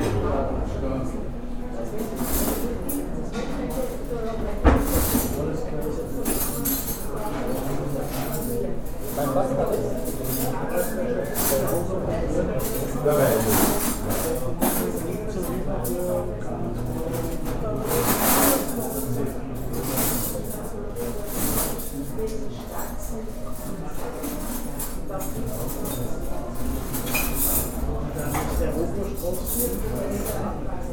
gasthaus lindbauer, linke brückenstr. 2, 4040 linz